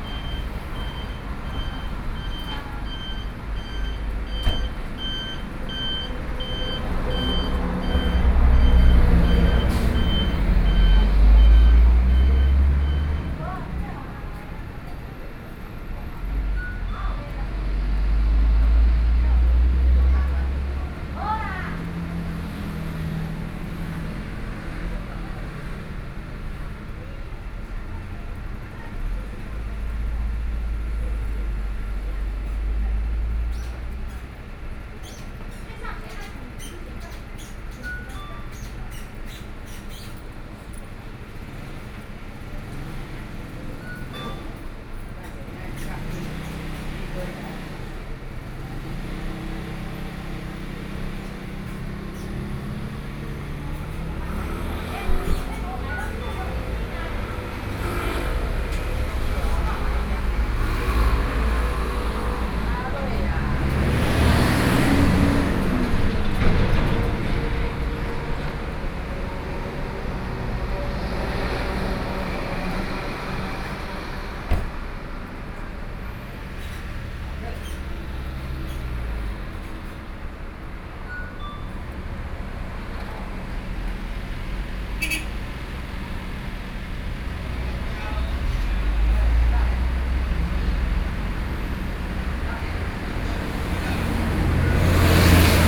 Guangfu Rd., 大漢村 Hualien County - In front of the convenience store
In front of the convenience store, In the street, Traffic Sound, The weather is very hot
Binaural recordings